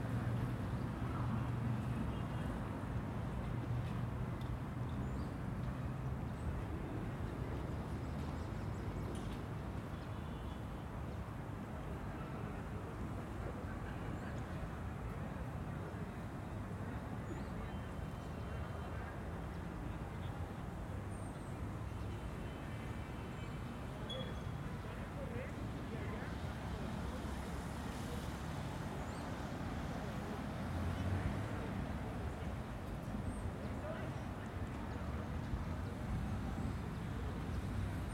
{
  "title": "Parque Barrio José Joaquín Várgas, Dg, Bogotá, Colombia - José Joaquín Vargas Park on a cloudy day with blizzards, little traffic and birds singing.",
  "date": "2021-11-18 16:25:00",
  "description": "Jose Joaquin Vargas Park is located near the lung of Bogota, it is a very large park where you can hear the birds, children playing, people playing sports, playing soccer, tennis, basketball and volleyball, in a meeting point for people with their dogs with few dialogues, is near a street with few cars, motorcycles and is in an area of airplane flights.\nIn addition, people pass by selling their products, such as ice cream, candy and food.",
  "latitude": "4.67",
  "longitude": "-74.09",
  "altitude": "2553",
  "timezone": "America/Bogota"
}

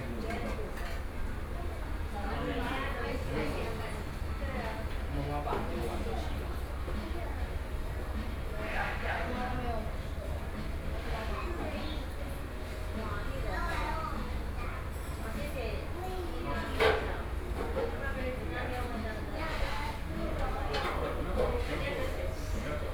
{
  "title": "Zhongzheng Rd., 羅東鎮集祥里 - In the restaurant",
  "date": "2014-07-27 20:17:00",
  "description": "in the Pot shops",
  "latitude": "24.68",
  "longitude": "121.77",
  "altitude": "17",
  "timezone": "Asia/Taipei"
}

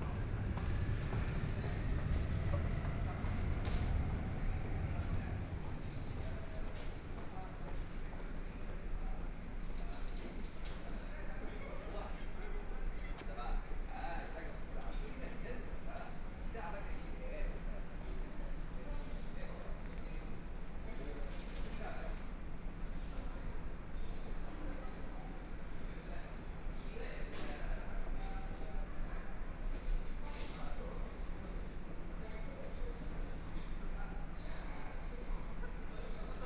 {
  "title": "Changshu Road Station, Shanghai - In the station",
  "date": "2013-12-03 14:36:00",
  "description": "Walking through the subway station, Binaural recording, Zoom H6+ Soundman OKM II",
  "latitude": "31.22",
  "longitude": "121.45",
  "altitude": "5",
  "timezone": "Asia/Shanghai"
}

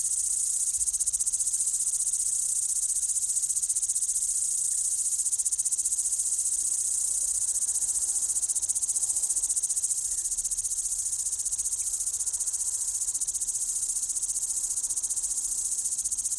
{
  "title": "Unterbergstraße, Reit, Deutschland - CricketsBubblingWaterMix",
  "date": "2022-08-11 21:58:00",
  "description": "Crickets in the evening mixed with the bubbling water of a small brook.",
  "latitude": "47.67",
  "longitude": "12.47",
  "altitude": "683",
  "timezone": "Europe/Berlin"
}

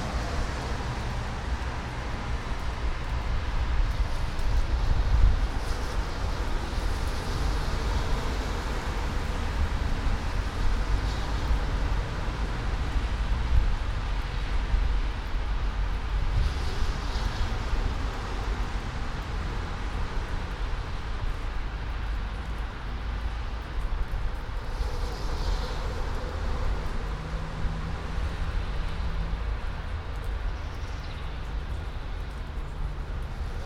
{
  "title": "all the mornings of the ... - mar 14 2013 thu",
  "date": "2013-03-14 08:45:00",
  "latitude": "46.56",
  "longitude": "15.65",
  "altitude": "285",
  "timezone": "Europe/Ljubljana"
}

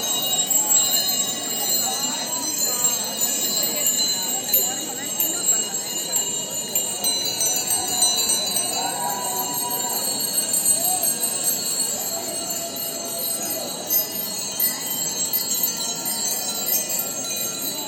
{
  "title": "Slovenska cesta, Ljubljana, Slovenia - Protest from the balconies goes to the wheels / Protest z balkonov gre na kolesa",
  "date": "2020-05-01 19:36:00",
  "description": "After weeks of silence..... Ljubljana is very much alive again and it shows:\nDON'T TAKE OUR FREEDOM TO US!\nIn the weeks when we, as a society, are responding jointly to the challenges of the epidemic, the government of Janez Janša, under the guise of combating the virus, introduces an emergency and curtails our freedoms on a daily basis. One after the other, there are controversial moves by the authorities, including increasing police powers, sending troops to the border, spreading false news about allegedly irresponsible behavior of the population, excessive and non-life-limiting movement of people, combating hatred of migrants, eliminating the most precarious from social assistance measures, spreading intolerance and personal attacks on journalists and press freedom.",
  "latitude": "46.05",
  "longitude": "14.50",
  "altitude": "305",
  "timezone": "Europe/Ljubljana"
}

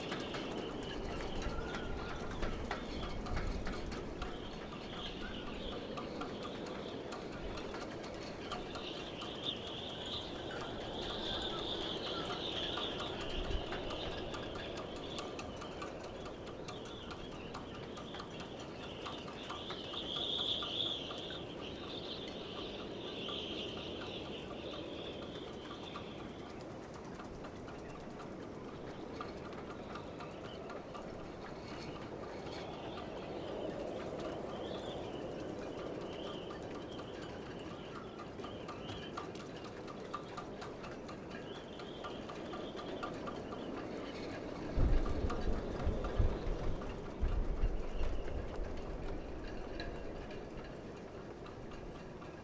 {
  "title": "woudsend: marina - the city, the country & me: wind blown riggings",
  "date": "2013-06-13 19:30:00",
  "description": "stormy day (force 7-8), wind blows through the riggings of the ships\nthe city, the country & me: june 13, 2013",
  "latitude": "52.95",
  "longitude": "5.63",
  "altitude": "1",
  "timezone": "Europe/Amsterdam"
}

